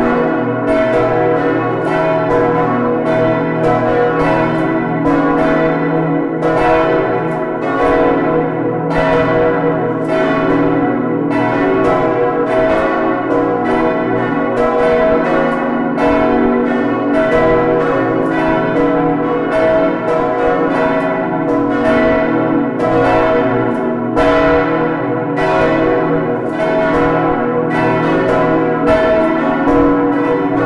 Nivelles, Belgique - Nivelles bells
The bells of the Nivelles church at twelve, a beautiful melody of four bells. Before the bells ringing, there's an automatic tune played on the carillon. Recorded inside the tower with Tim Maertens ans Thierry Pauwels, thanks to Robert Ferrière the carillon owner.
4 October 2014, 12:00